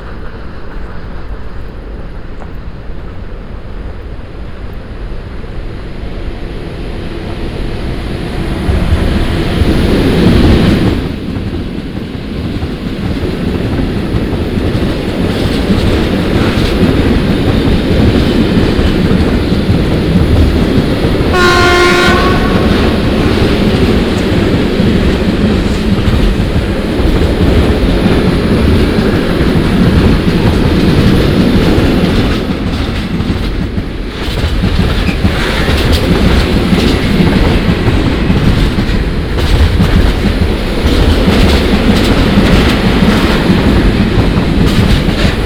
Poznan, Jana III Sobieskiego housing estate - building 21
(binaural) evening wander around vast housing estate. in front of building 21. it's warm. people sit on benches and echos of their conversations reverberate off the sides of high buildings. different sounds can be heard form the myriad of windows. coughs, groans, laughs, talks, radios. passing by a broken intercom. at the end of the recording i'm crossing a street and walk into a fright train that passes about two meters in front of me. (sony d50 + luhd pm01bin)